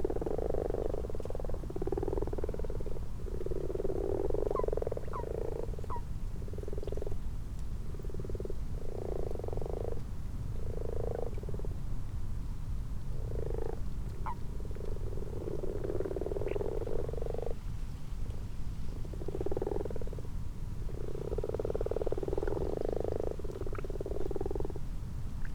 Malton, UK - frogs and toads ...
common frogs and common toads ... xlr sass on tripod to zoom h5 ... time edited unattended extended recording ...
England, United Kingdom, 12 March